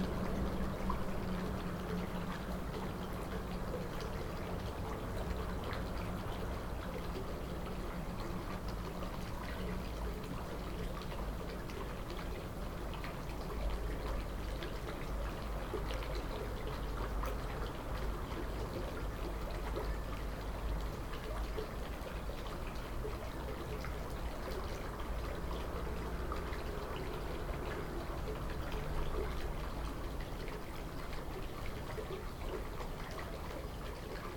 Ein Tag an meinem Fenster - 2020-03-24